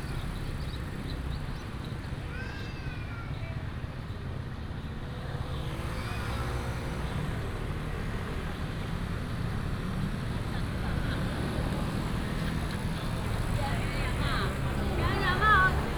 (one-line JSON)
{"title": "莿桐鄉零售市場, Citong Township - Walking in the market", "date": "2017-03-01 09:51:00", "description": "Walking in the market, From the outdoor market into the indoor market, Traffic sound", "latitude": "23.76", "longitude": "120.50", "altitude": "41", "timezone": "Asia/Taipei"}